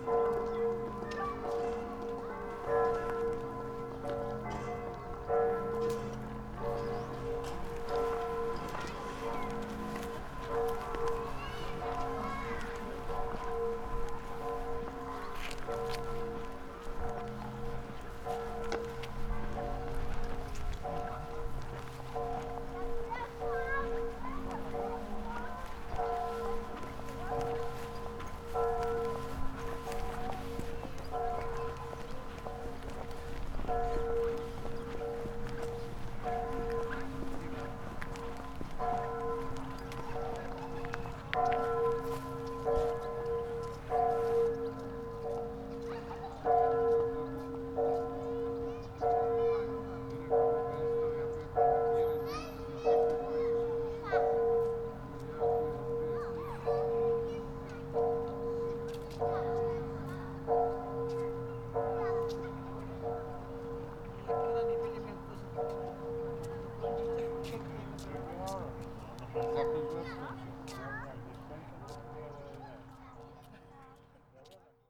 Bielawa, Polen - village bells, voices
Sunday afternoon, Bielawa village bells, pedestrians, children, voices
(Sony PCM D50)